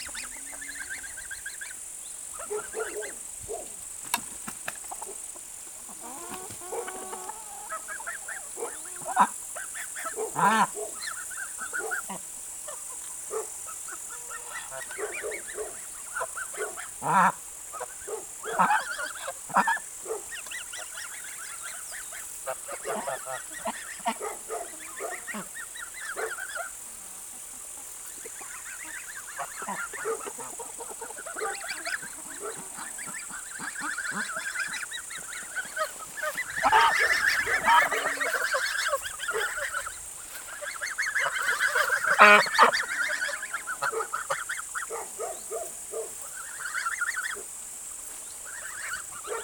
Zákoutí, Blatno, Czechia - Bílina soundscape
the Bilina creek next to the farmhouse with geese, chicks, cars and cows and a dog and electromagnetic pollution.
Ústecký kraj, Severozápad, Česko, 4 August 2019, 12:26